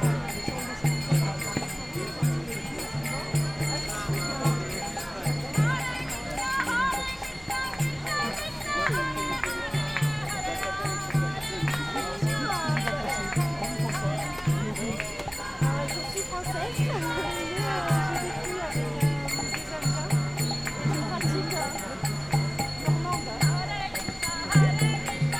Pl. du Président Thomas Wilson, Toulouse, France - Hare Krishna in the park
Hare Krishna in the park
Captation : ZOOMH6